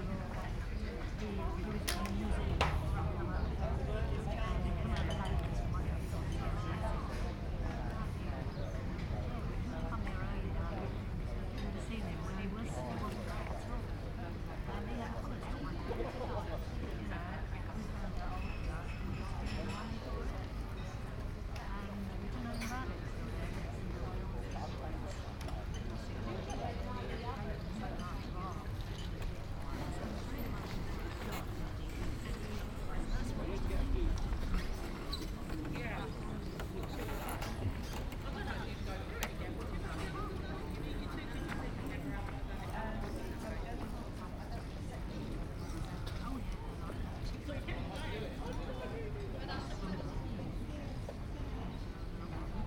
The Leas, Folkestone, Regno Unito - GG FolkestoneLeasTerrace 190524-h13-35
May 24th 2019, h 13:35. Standing on Folkestone Leas Terrace, short walking around, then walking east. Binaural recording Soundman OKMII